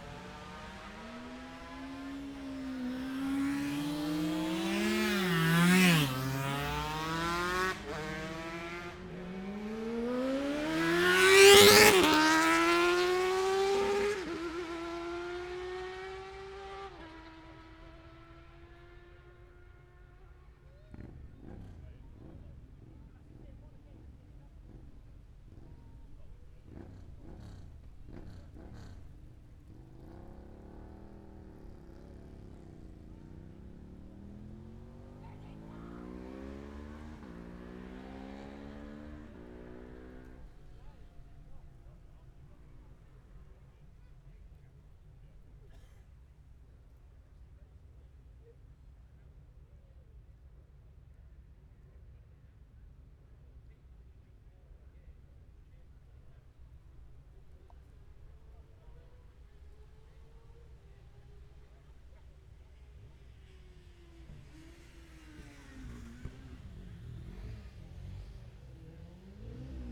Lightweight up to 400 cc practice ... Mere Hairpin ... Oliver's Mount ... Scarborough ... open lavalier mics clipped to baseball cap ...
Scarborough, UK, 24 September 2016, 10:14